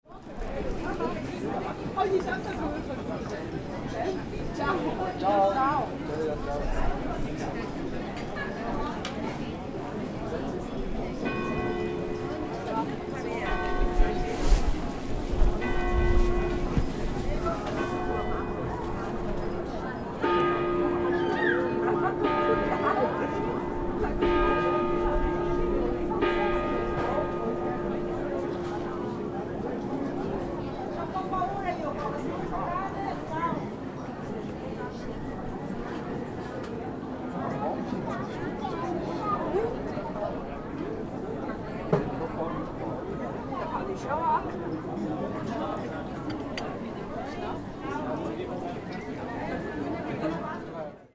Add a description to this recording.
Markt, Marcu, Mercato in Poschiavo, Puschlav